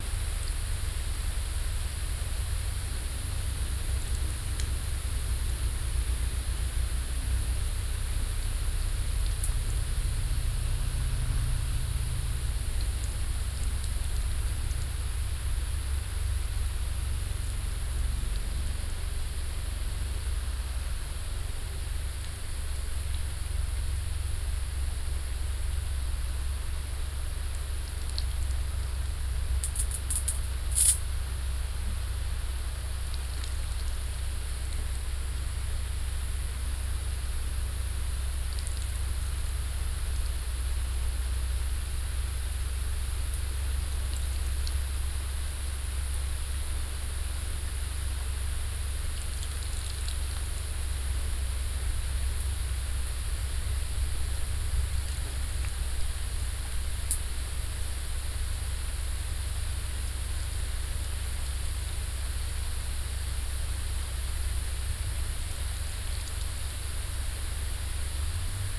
aubignan, greenhouse with activated water sprinkler
Inside another green house. Here with activated water sprinkler. The sound of the sprayed water in the long plastic folio tube.
international topographic field recordings, ambiences and scapes